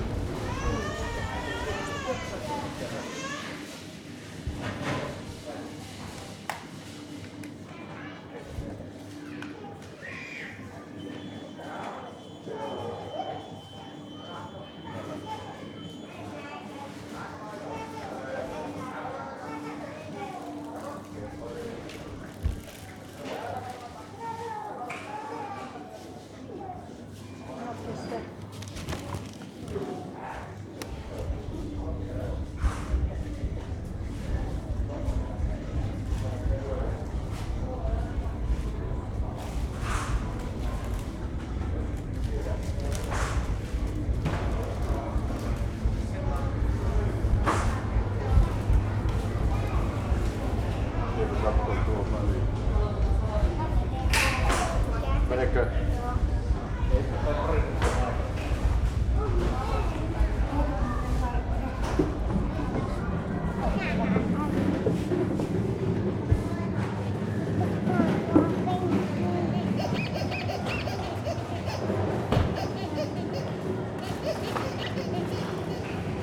Prisma supermarket, Rovaniemi, Finland - Midsummer shopping
It's midsummer eve, a national holiday in Finland. People are shopping for their last-minute groceries. Especially a lot of families at the store. Zoom H5, default X/Y module inside the shopping cart.
19 June 2020, 11:20